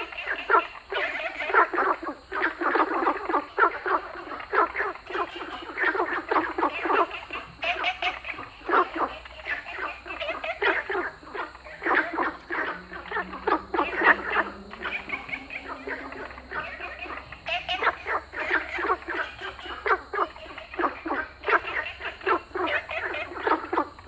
In the park, Frog sound, Ecological pool
Zoom H2n MS+XY